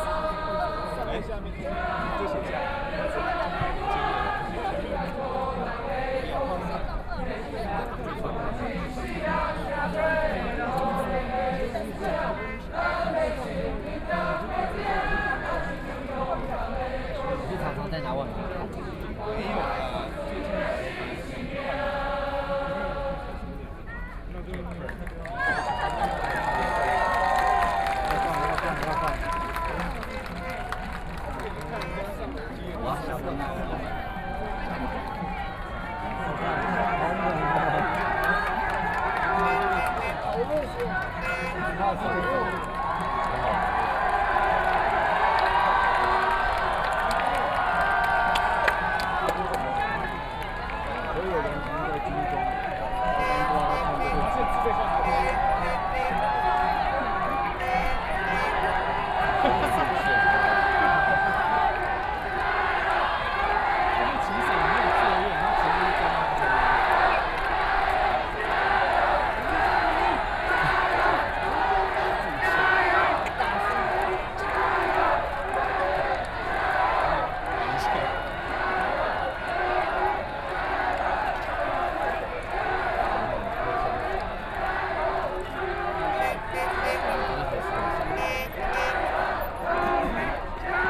Protesters gathered in front of the ladder, Collective shouting and singing, Binaural recordings, Sony PCM D50 + Soundman OKM II